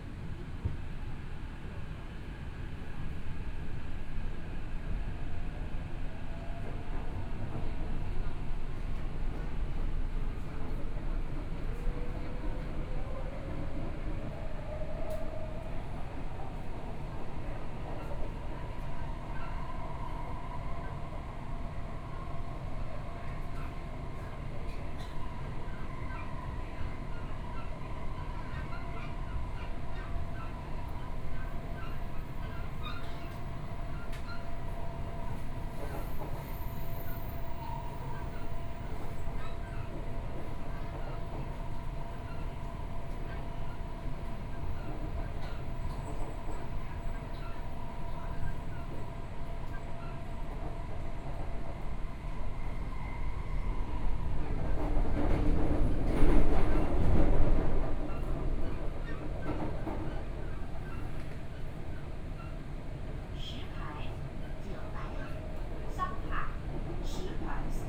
Tamsui Line, Taipei City - Tamsui Line (Taipei Metro)
from Beitou Station to Mingde Station, Binaural recordings, Zoom H4n + Soundman OKM II